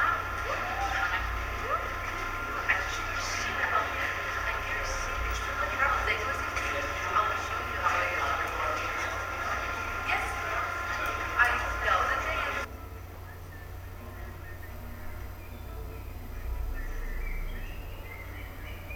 desk, mladinska, maribor - spoken words, stream, radio aporee
reading last words of this long text, listening ending moment, just before sending ...
Maribor, Slovenia, 2 July, ~10:00